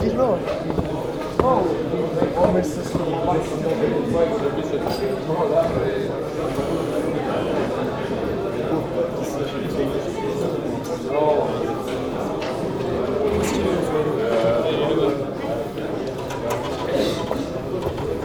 Quartier du Biéreau, Ottignies-Louvain-la-Neuve, Belgique - Pause between two courses
A pause between two courses in the big auditoire called Croix du Sud.
11 March 2016, 14:55